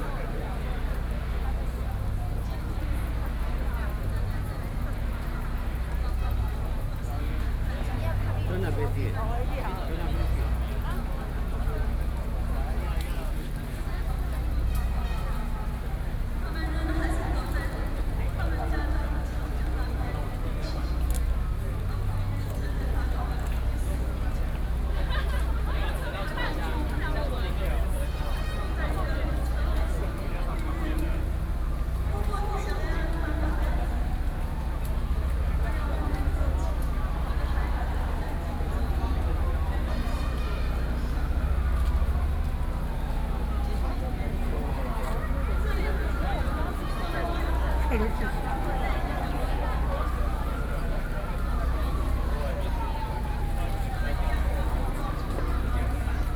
Ketagalan Boulevard - Protest
Protest against the government, A noncommissioned officer's death, Sony PCM D50 + Soundman OKM II